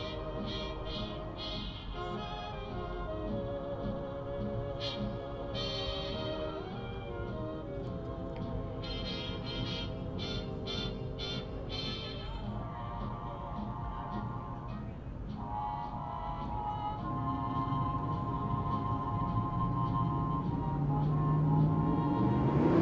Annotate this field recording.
Saturday evening around 10:30 pm in Peru, Indiana, USA. Vehicles cruising down Broadway St., accompanied by music coming from a speaker mounted in the downtown area.